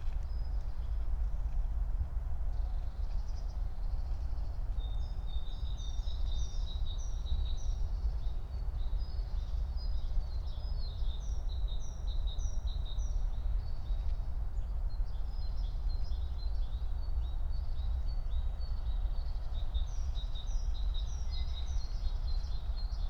(remote microphone: AOM5024/ IQAudio/ RasPi Zero/ LTE modem)